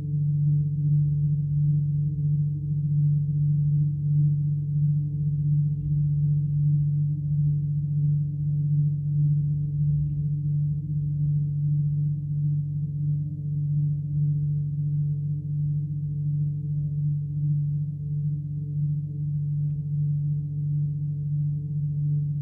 Old Concrete Rd, Penrith, UK - Wind in wires
Wind in electricity wires. recorded with 2x hydrophones
7 August 2019, ~12:00